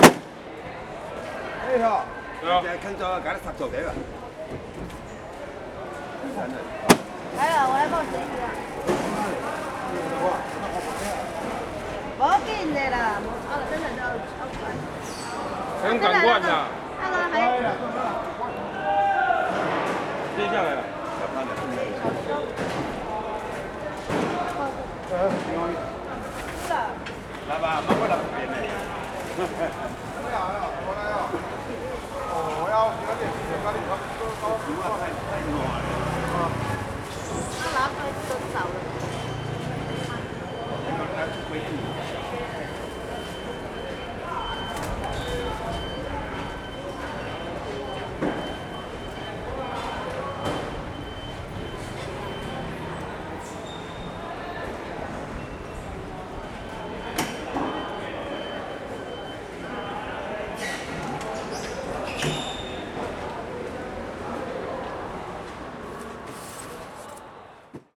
三重果菜市場, Sanchong Dist., New Taipei City - Fruits and vegetables wholesale market
Fruits and vegetables wholesale market, Traffic Sound
Sony Hi-MD MZ-RH1 +Sony ECM-MS907